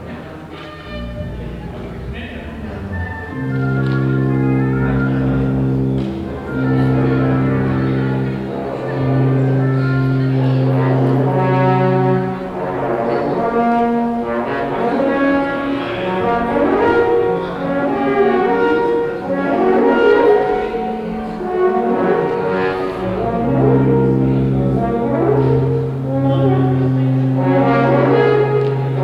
Südviertel, Essen, Deutschland - essen, philharmonie, alfred krupp concert hall, orchestra rehearsal
Im Alfred Krupp Saal der Philharmonie Essen. Der Klang einer Probe des Sinfonieorchesters Teil 3 - Ende der Probe - Pause
Inside the Alfred Krupp concert hall. The sound of a rehearsal of the symphonic orchestra - part 3 - end of the rehearsal - break
Projekt - Stadtklang//: Hörorte - topographic field recordings and social ambiences